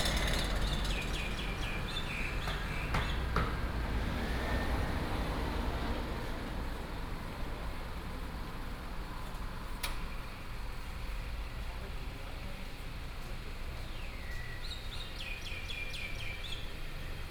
太麻里街74-78號, Tavualje St., Taimali Township - Morning street
Morning street, Traffic sound, Bird cry, Seafood shop
Binaural recordings, Sony PCM D100+ Soundman OKM II
Taimali Township, Taitung County, Taiwan, 1 April 2018, ~08:00